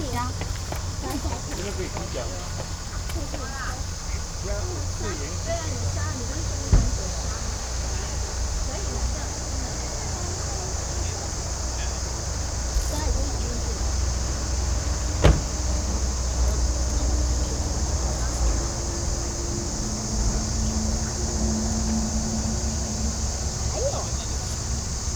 {
  "title": "Shimen, New Taipei City - Parking lot",
  "date": "2012-06-25 15:02:00",
  "latitude": "25.29",
  "longitude": "121.55",
  "altitude": "7",
  "timezone": "Asia/Taipei"
}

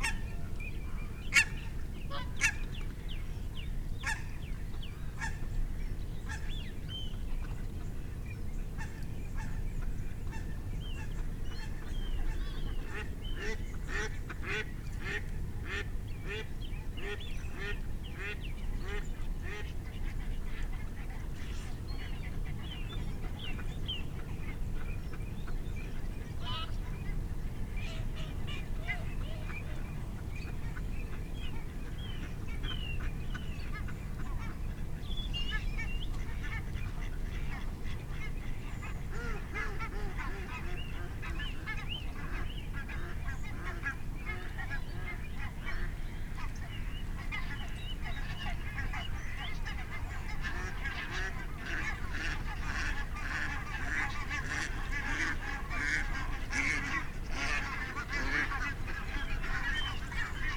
{
  "title": "Dumfries, UK - whooper swan soundscape ... bag ...",
  "date": "2022-02-03 07:56:00",
  "description": "whooper swan soundscape ... bag ... dpa 4060s clipped to bag to zoom f6 ... folly hide ... bird calls ... barnacle geese ... curlew ... song thrush ... moorhen ... shoveler ... great tit ... teal ... canada geese ... wigeon .... starling ... crow ... lapwing ... dunnock ... time edited unattended extended recording ...",
  "latitude": "54.98",
  "longitude": "-3.48",
  "altitude": "8",
  "timezone": "Europe/London"
}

